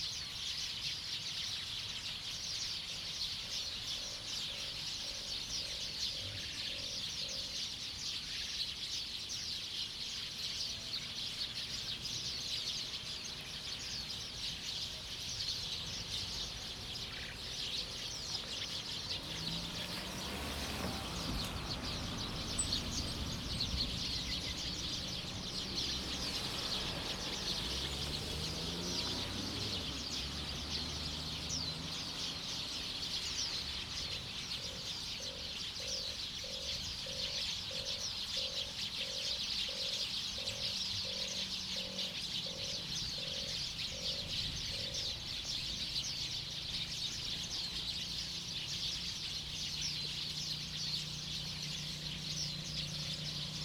東里村, Fuli Township - Birdsong
After the rain, Birdsong, Traffic Sound
Zoom H2n MS +XY
Hualien County, Taiwan, 7 September, 18:06